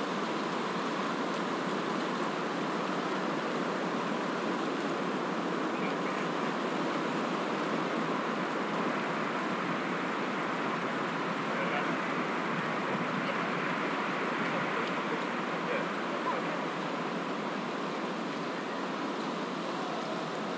Spa Park, Jelenia Góra, Poland - (890) Windy park atmosphere
Recording of a spa park atmosphere on a windy day with clearly hearable tree branches squeaking.
Quality isn't best due to the weather conditions...
Recorded with an Olympus LS-P4.
województwo dolnośląskie, Polska